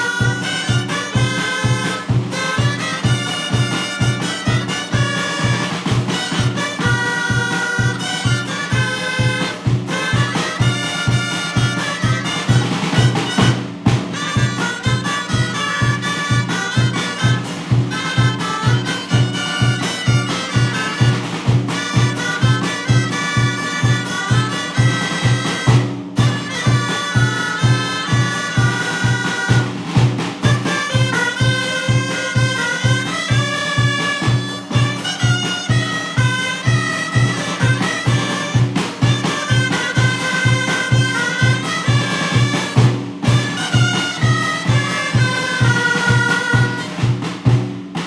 SBG, Escuela Municipal - Grallers de Sant Bartomeu